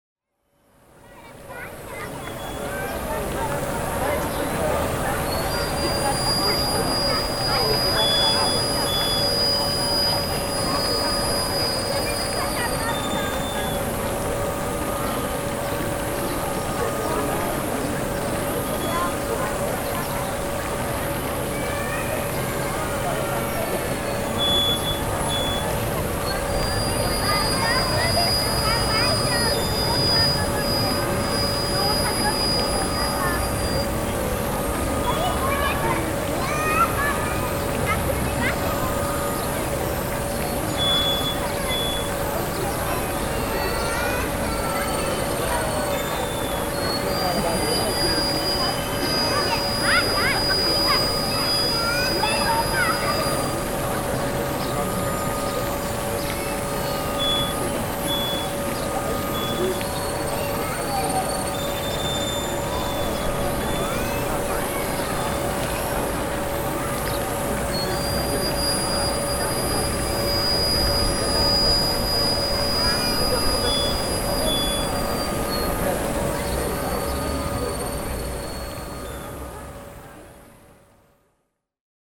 {"title": "Water/wind sculpture, Centre Pompidou, Paris", "date": "2010-07-24 14:50:00", "description": "Sitting beside the wind/water sculpture outside the Centre Pompidou. Saturday afternoon.", "latitude": "48.86", "longitude": "2.35", "altitude": "51", "timezone": "Europe/Paris"}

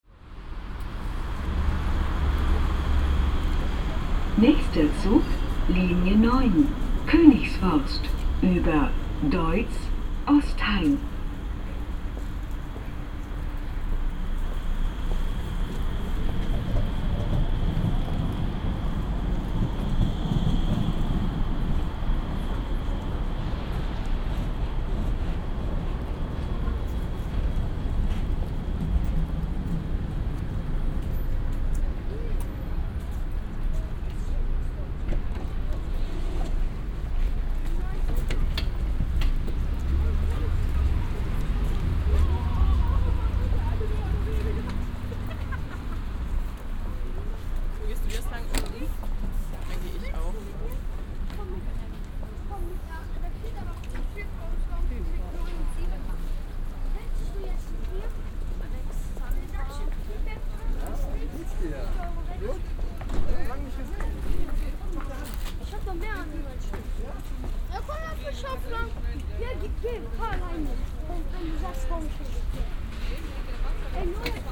{"title": "cologne, neumarkt, strassenbahnhaltestelle", "date": "2009-01-21 15:50:00", "description": "strassenbahnhaltestelle am frühen abend, feierabendverkehr, durchsagen, schritte, konversationen im vorübergehen\nsoundmap nrw: social ambiences/ listen to the people - in & outdoor nearfield recordings", "latitude": "50.94", "longitude": "6.95", "altitude": "56", "timezone": "Europe/Berlin"}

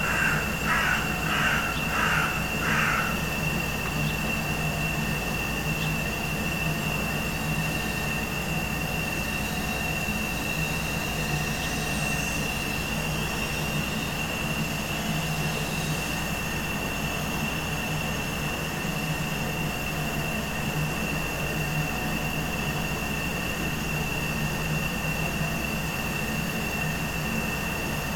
{"title": "Siūlų g., Kaunas, Lithuania - Gasbox hum amidst industrial block", "date": "2021-05-13 13:00:00", "description": "General atmosphere, centered around a drone of a single gasbox, recorded with ZOOM H5 amidst industrial building block.", "latitude": "54.87", "longitude": "23.94", "altitude": "32", "timezone": "Europe/Vilnius"}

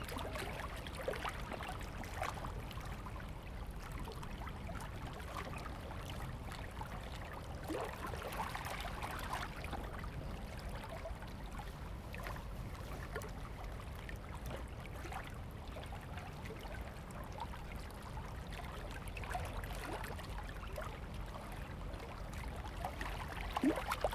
{"title": "彌陀漁港, Mituo Dist., Kaohsiung City - At the pier", "date": "2018-05-07 14:33:00", "description": "At the pier, Sound of the waves, Construction sound\nZoom H2n MS+XY", "latitude": "22.76", "longitude": "120.23", "timezone": "Asia/Taipei"}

